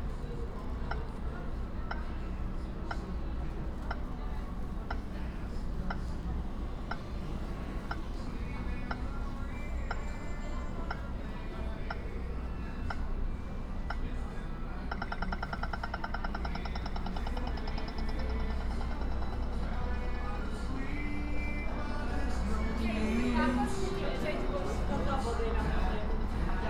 slovenska cesta, ljubljana - traffic signals